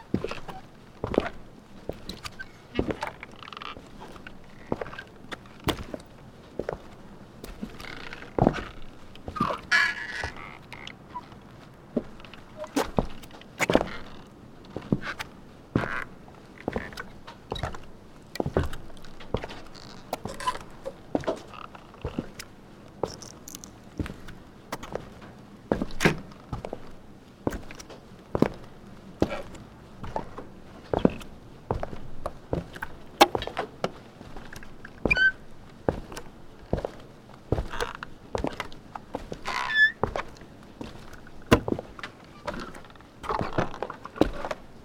Footsteps on a wooden bridge.
Recorded by a MS Setup Schoeps + Sound Devices 633 Recorder
13 July, 12:00